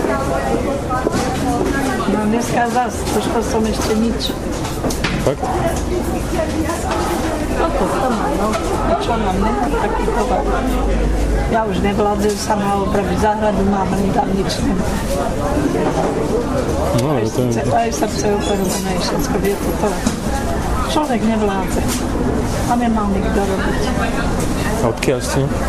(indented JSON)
{
  "title": "bratislava, market at zilinska street",
  "date": "2010-09-03 20:23:00",
  "description": "vendors telling some history about bratislavas biggest marketplaces",
  "latitude": "48.16",
  "longitude": "17.11",
  "timezone": "Europe/Berlin"
}